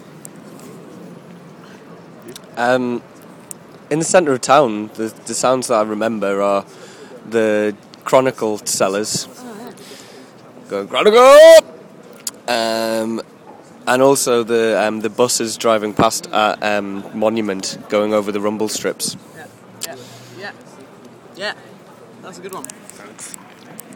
Sonic Memories, interview series. Asking people memories about sound.